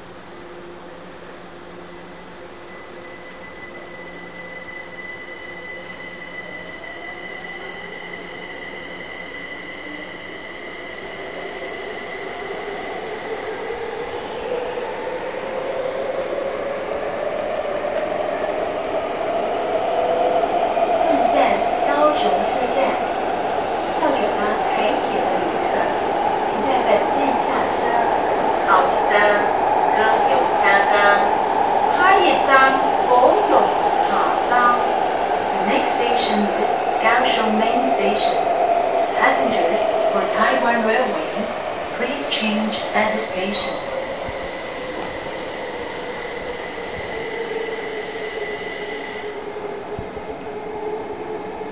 KRTC (Subway) Arena - Main Station
2009, Oct, 20th. On the Path from Arena to Main staion, Red Line